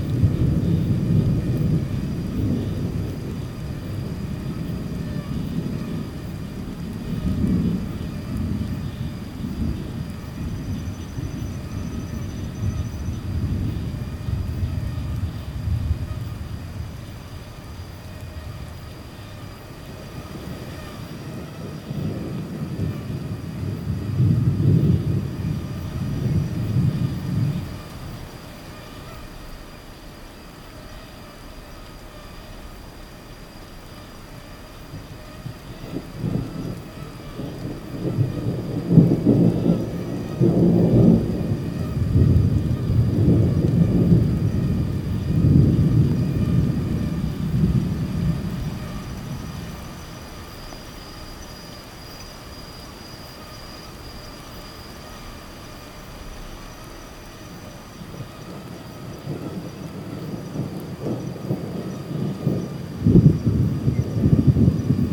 Tangará, Rio Acima - MG, 34300-000, Brasil - Mosquitoes and light rain with thunderstorms
Evening in the interior of Minas Gerais, Brazil.
Mosquitoes and light rain with thunderstorms.